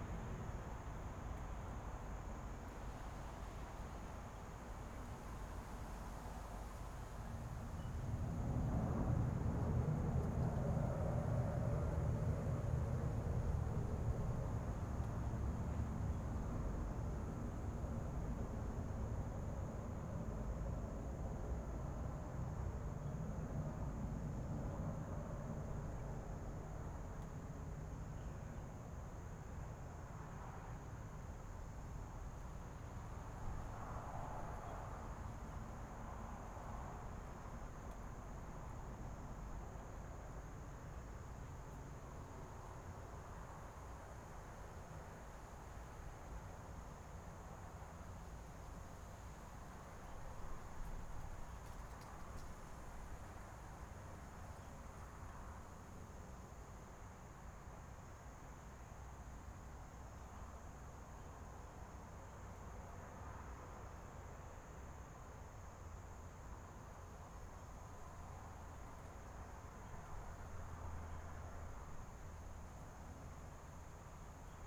Birds singing, Fighter flight traveling through, The distant sound of traffic, Zoom H6 M/S
Taitung County, Taiwan, 17 January 2014